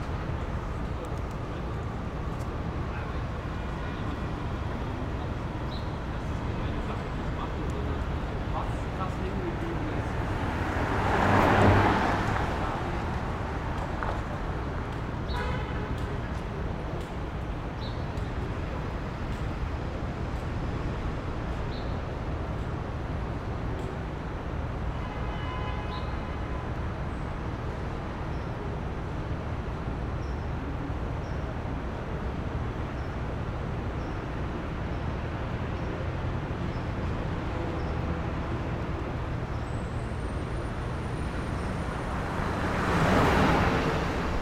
Sound from the Church of Saint Agnes at 10 AM in Midtown, Manhattan.